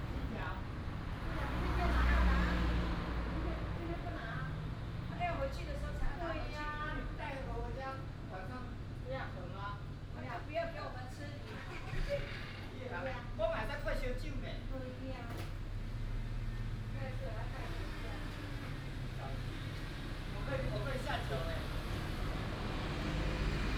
Dazhi St., Shigang Dist., Taichung City - Old community night
Old community night, traffic sound, The store is closed for rest, Binaural recordings, Sony PCM D100+ Soundman OKM II